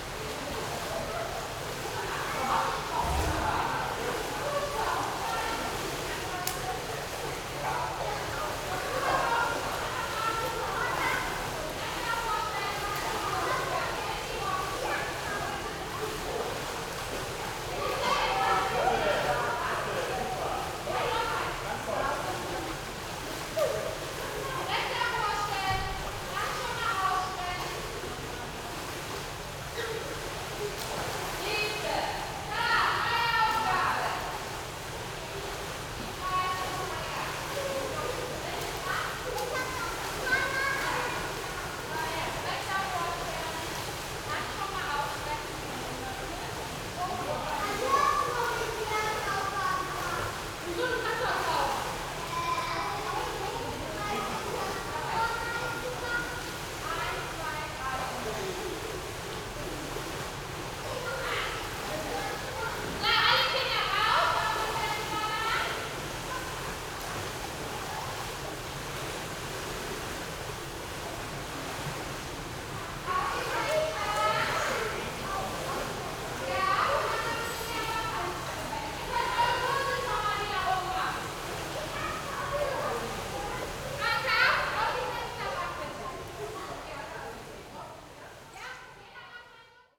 Kreyenbrück, Oldenburg, Deutschland - swimming hall ambience
swimming hall ambience, training course for school kids
(Sony PCM D50)
Oldenburg, Germany, 14 March 2015, 10:55